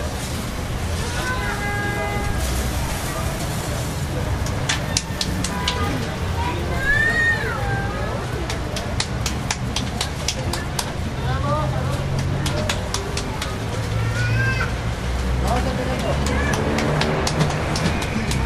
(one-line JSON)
{"title": "Colonia Centro, Cuauhtémoc, Mexico City, Federal District, Mexico - tortillas y cuernos", "date": "2014-03-29 13:12:00", "description": "Tráfico intenso en la rotatória del Paseo de la Reforma, mientras cerca tostavan tortillas calientitas!", "latitude": "19.44", "longitude": "-99.15", "altitude": "2244", "timezone": "America/Mexico_City"}